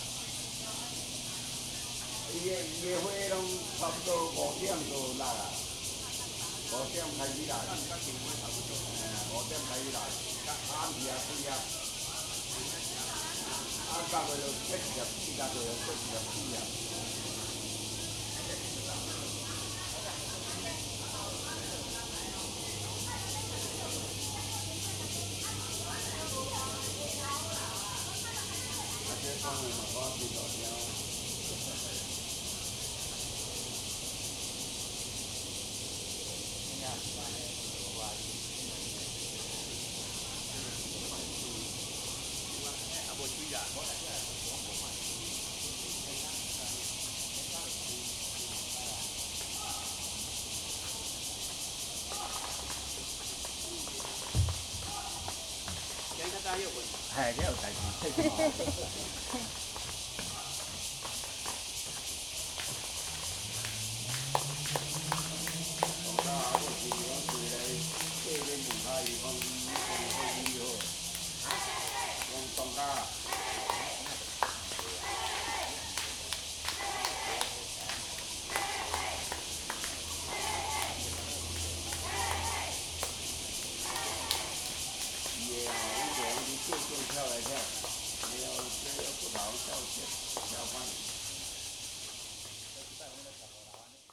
Fuyang Eco Park, Da'an District, Taipei City - in the Park
A group of old people talking frog, A lot of people doing aerobics in the mountains, Bird calls, Cicadas cry
Zoom H2n MS+XY
Da’an District, 台北聯絡線